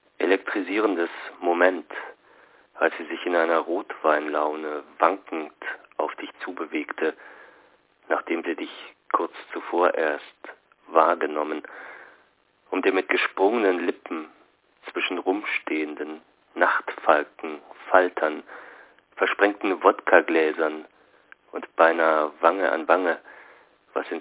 femme fatale at elektra - Im Nacken - hsch ::: 29.04.2007 16:03:56
Cologne, Germany